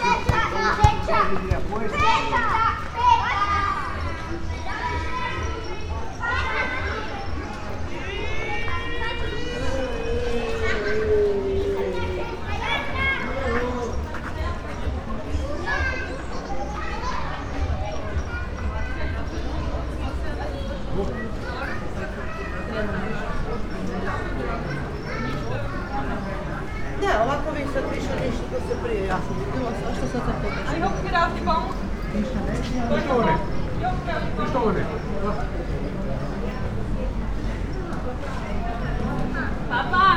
narrow streets, Novigrad, Croatia - evening walk
16 July 2013, 21:18